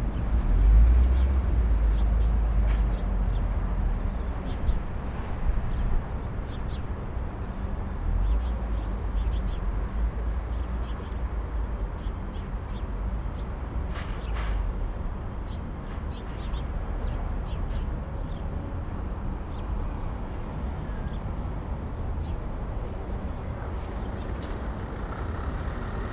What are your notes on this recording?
koepenicker, ufer, bank, river, fluss, spree